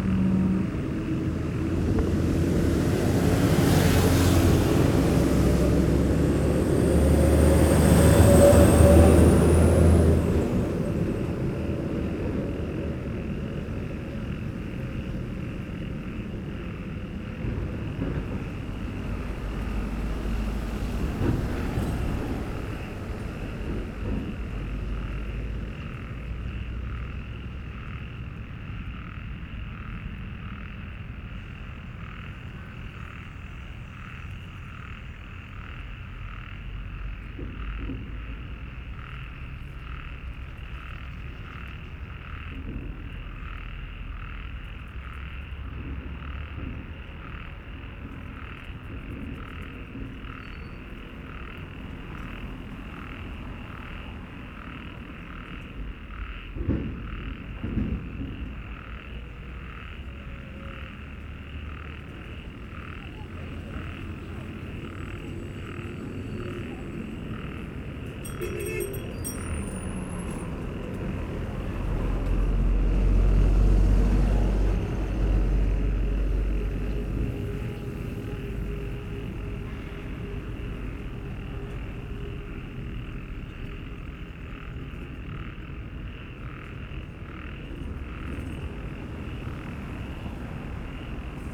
Shenglian Rd, Baoshan Qu, Shanghai Shi, China - Frogs in industrial area
Frogs in a small stream are singing, discontinuously. Busy traffic in the back. Distant heavy construction work with alarm sounds
Des grenouilles dans un ruisseau chantent, sans interruption. Bruit de trafic derrirère l’enregistreur. Bruit de chantier lointain, et son d’alarme